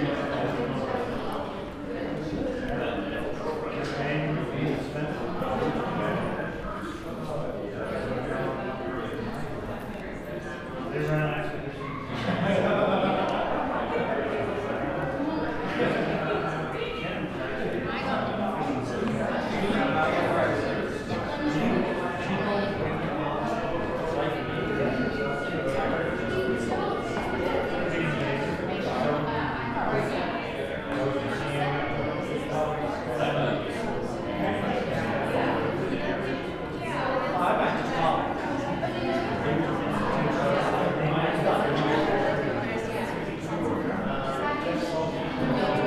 Robert Colescott opening at the Prescott College Art Gallery